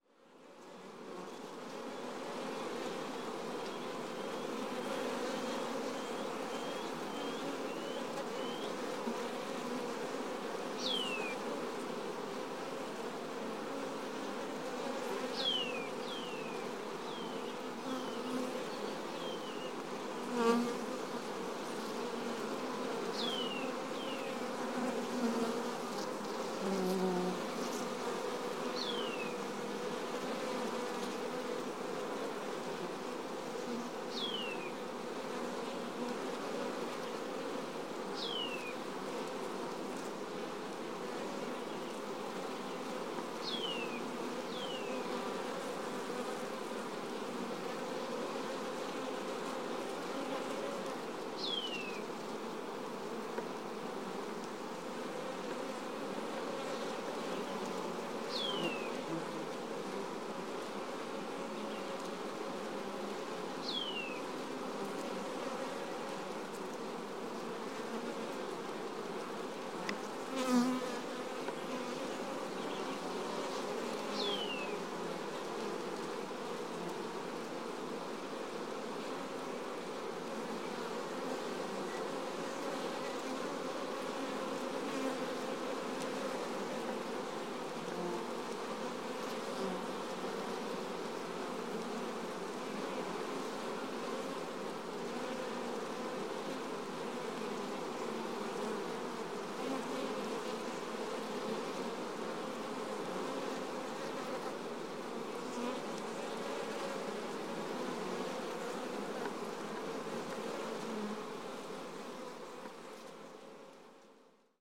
Tamahunga, New Zealand - wild bees of Tamahunga
wild bees in the New Zealand bush, a busy hive within a tree hollow about 6 metres up.
Big Omaha, New Zealand, 1 June, 10:00